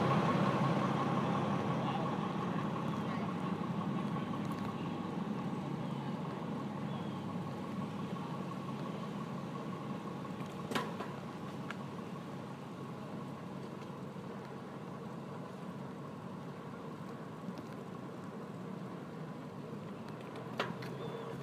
Ames, IA, USA - CyRide on campus